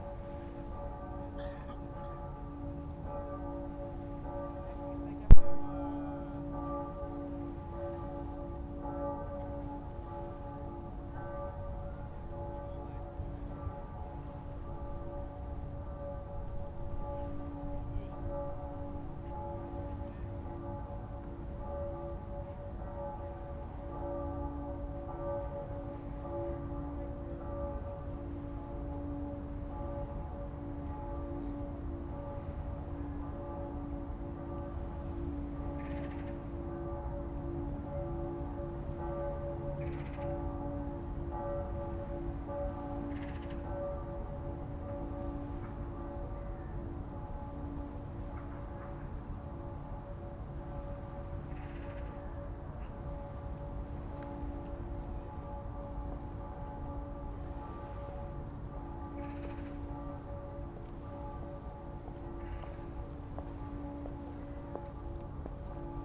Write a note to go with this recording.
[H4n Pro] Cathedral bells on the opposite river bank. Towards the end waves crashing on the shore, caused by the river bus.